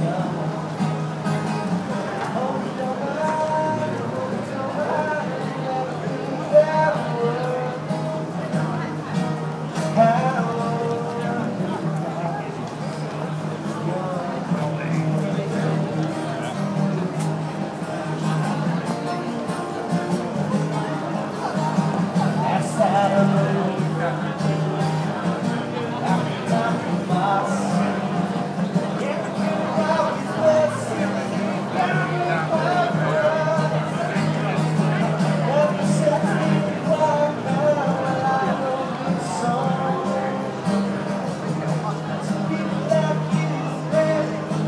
Singer, guitar, people, markets, food
9 September 2010, 17:18